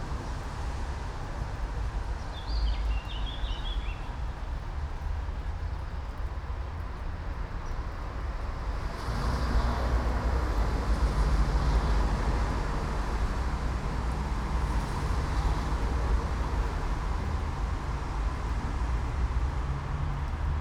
all the mornings of the ... - may 6 2013 mon
Maribor, Slovenia